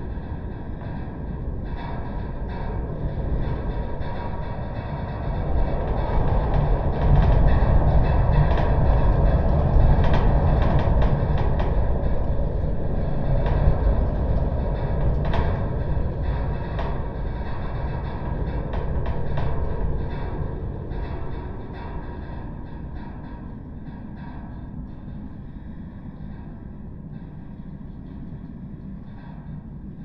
Spittal of Glenshee, Blairgowrie, UK - discarded
discarded fence wire by the Allt Ghlinn Thaitneich
11 June 2022, ~12:00